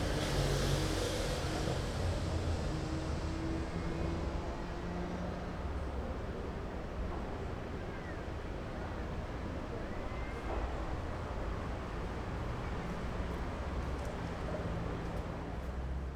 Milano, Italia - WLD. macao, the new center of art and culture